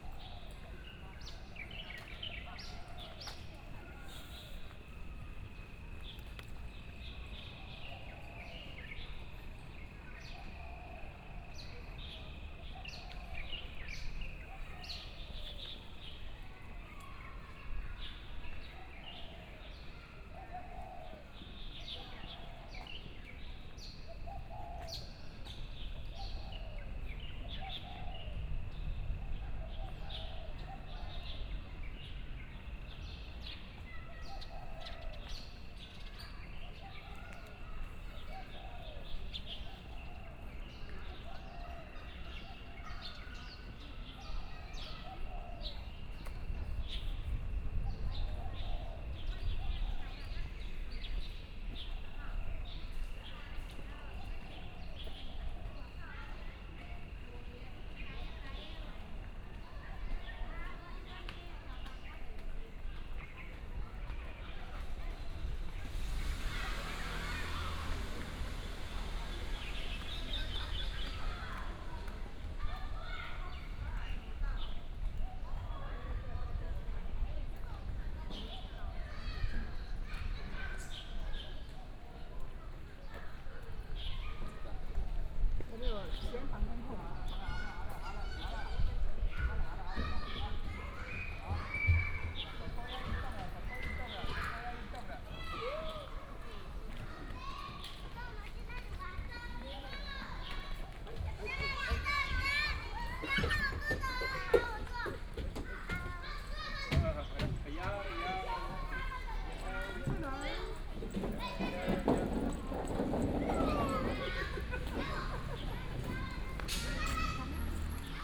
Minde Park, Zhonghe Dist. - Walking through the park
Walking through the park, sound of the birds, traffic sound, Child
Zhonghe District, 國光街215巷24弄10號, April 2017